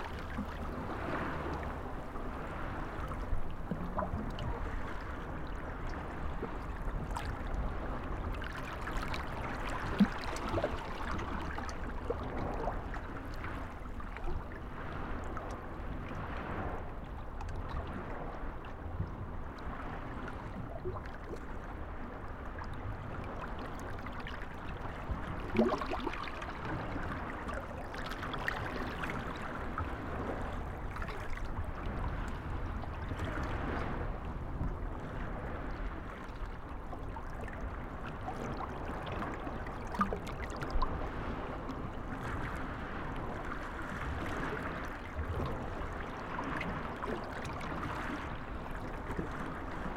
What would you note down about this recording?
Mediterranean sea, lapping on the shore on a calm evening. from the shore people and cars can be heard. Binaural recording. Artificial head microphone set up on some rocks on a breakwater, about 2 meters away from the waterline. Microphone facing north east. Recorded with a Sound Devices 702 field recorder and a modified Crown - SASS setup incorporating two Sennheiser mkh 20 microphones.